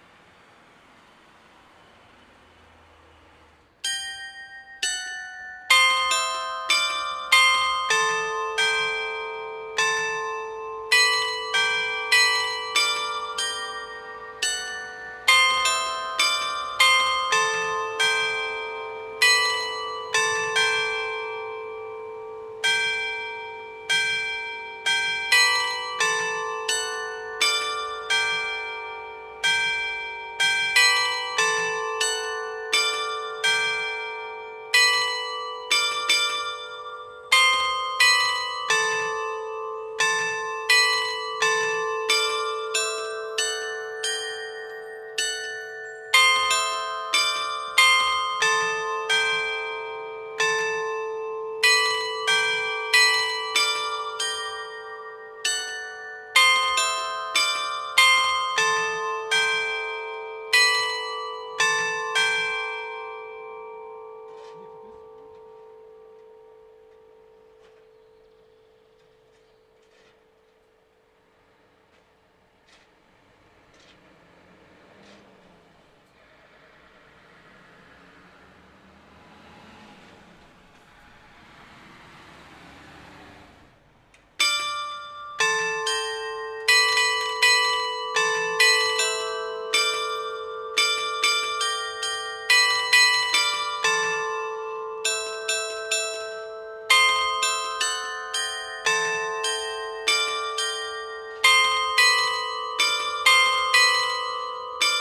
Le-Quesnoy-sur-Deûle (Nord)
Carillon de l'hôtel de ville
Ritournelles automatisées
14 June 2020, 10:00